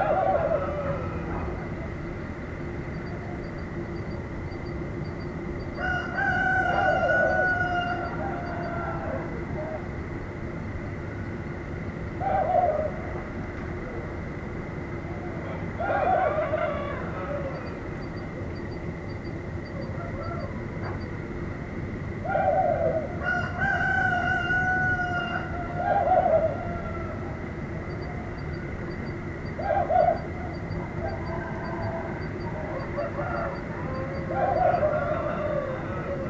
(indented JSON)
{
  "title": "piton st leu, ile de la reunion",
  "date": "2010-08-14 19:55:00",
  "description": "nuit à piton saint pendant le tournage de Signature, dHerve Hadmar",
  "latitude": "-21.23",
  "longitude": "55.30",
  "altitude": "79",
  "timezone": "Indian/Reunion"
}